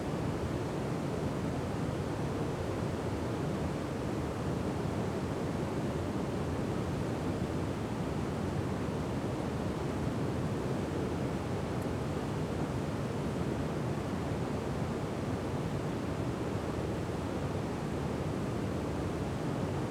Willow River State Park - Top of Dam
Recorded from the top of the new dam at Willow River State Park